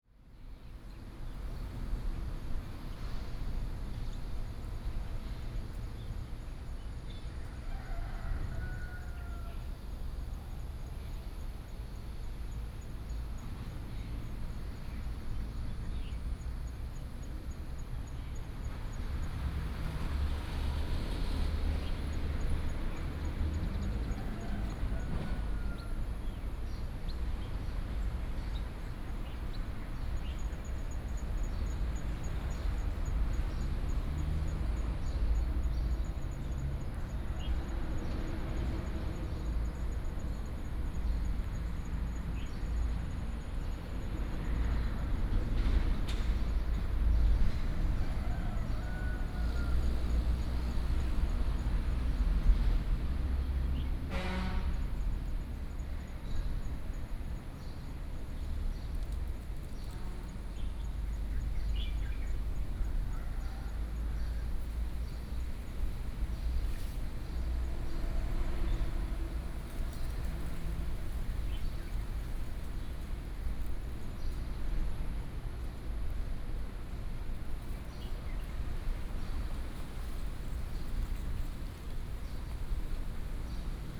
頭城鎮外澳里, Yilan County - In the plaza next to the temple
In the plaza next to the temple, Chicken sounds, Birdsong, Very hot weather, Traffic Sound, Traveling by train
Toucheng Township, Yilan County, Taiwan, 7 July 2014, 14:56